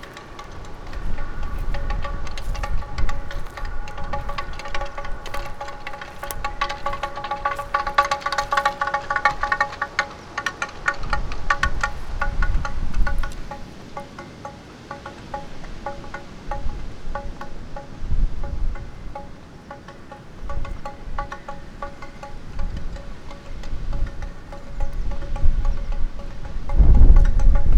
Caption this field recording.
while listening to winds through the early spring forest, wind rattle started to turn ...